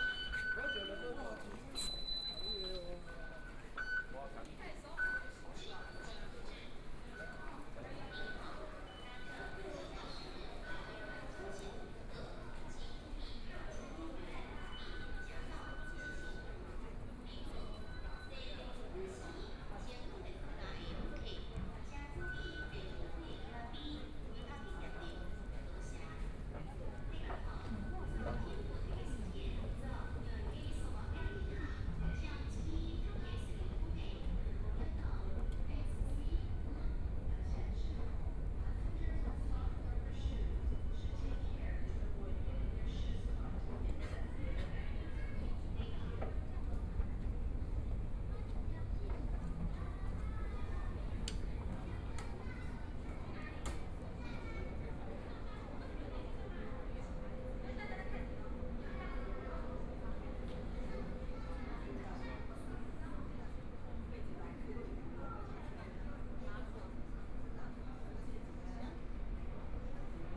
Jinzhou St., Zhongshan Dist. - walking in the Street
walking in the Street, Traffic Sound, From the park to the MRT station, Binaural recordings, ( Keep the volume slightly larger opening )Zoom H4n+ Soundman OKM II